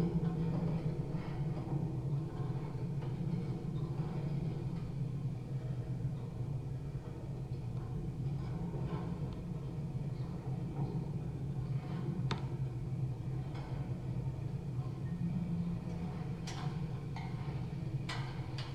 contact microphones on construction fence